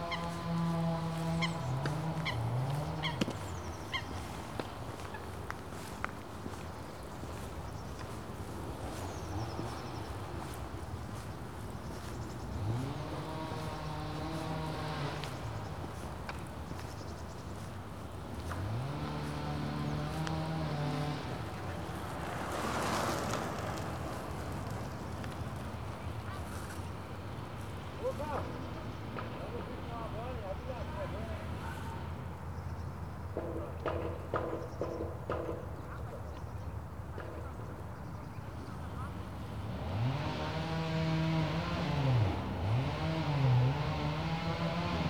Neckar, Poppenweiler, Deutschland - Sounds of springtime
Sounds of springtime
Baden-Württemberg, Deutschland